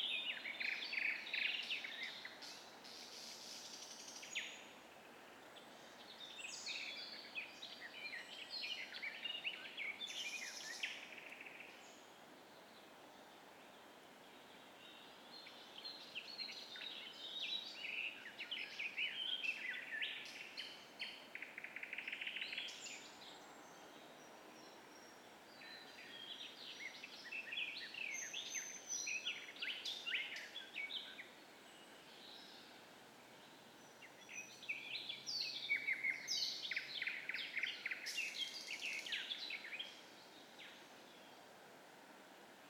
5.30 am. June 2015. Birds sing in the cemetery